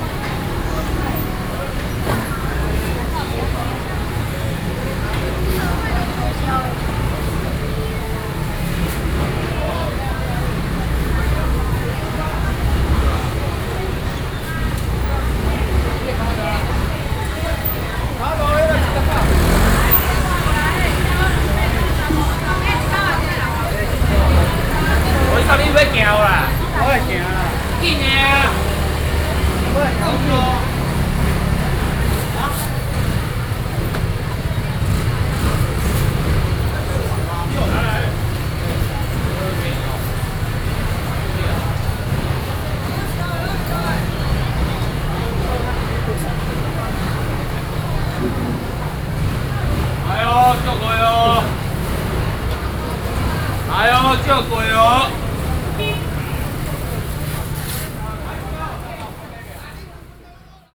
Walking in the traditional market
Sony PCM D50+ Soundman OKM II